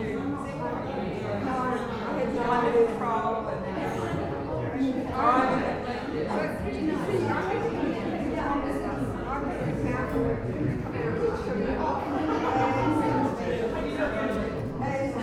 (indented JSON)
{
  "title": "neoscenes: The Green Bench, Burn opening",
  "date": "2010-02-03 17:46:00",
  "latitude": "-39.93",
  "longitude": "175.05",
  "altitude": "31",
  "timezone": "Pacific/Auckland"
}